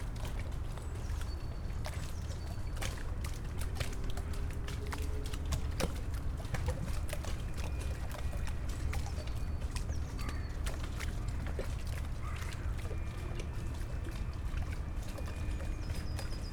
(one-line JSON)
{"title": "Berlin, Plänterwald, Spree - Spreepark soundscape, powerplant, ferris wheel", "date": "2014-02-08 12:15:00", "description": "Saturday noon, the ice has gone quickly after a few mild days; waves of the Spree, always the sounds from the power station, after a minute the ferris wheel at the nearby abandonded funfair starts squeaking\n(SD702, DPA4060)", "latitude": "52.49", "longitude": "13.49", "altitude": "23", "timezone": "Europe/Berlin"}